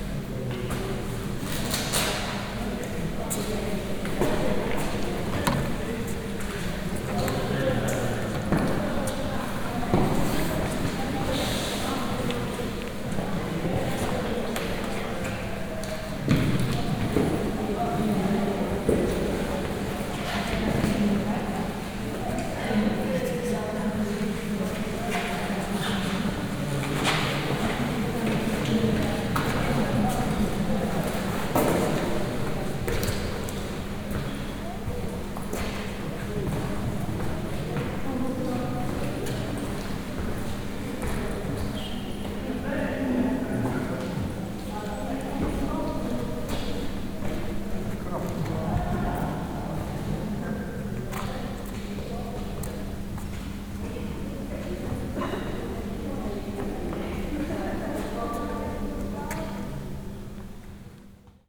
Volastra, church - french lunch
(binaural) recorded in a church. voices of a large group of French tourists having their lunch in front of the church.
Volastra SP, Italy, 5 September 2014